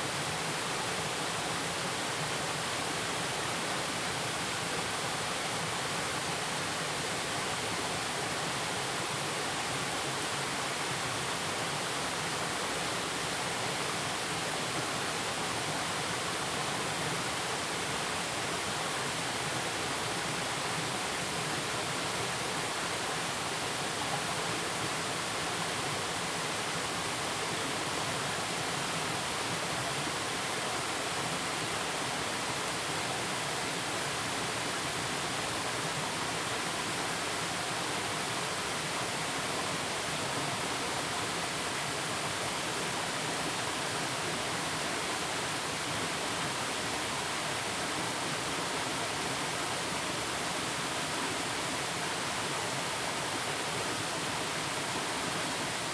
Fenglin Township, Hualien County - stream sound
waterfall, stream sound
Zoom H2n MS+XY +Sptial Audio
Hualien County, Taiwan